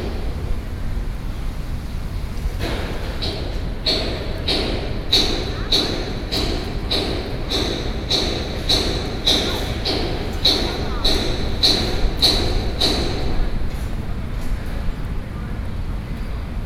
Taipei, Taiwan - In the e-mall outside
Zhongzheng District, Taipei City, Taiwan, October 2012